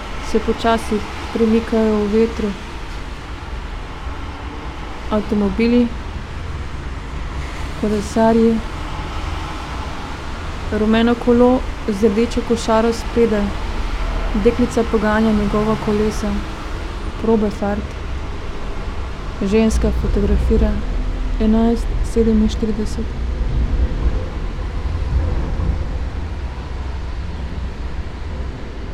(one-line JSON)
{"title": "writing reading window, Karl Liebknecht Straße, Berlin, Germany - part 12", "date": "2013-05-26 09:36:00", "latitude": "52.52", "longitude": "13.41", "altitude": "47", "timezone": "Europe/Berlin"}